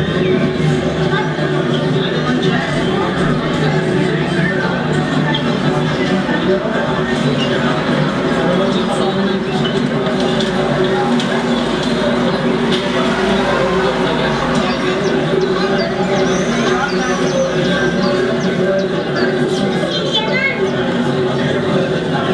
Ujezd, Phone Booth
VNITRUMILIMETRU
Its site-specific sound instalation. Sounds of energic big cities inside bus stops and phone booths in small town.
Original Sound of Istambul by
Adi W.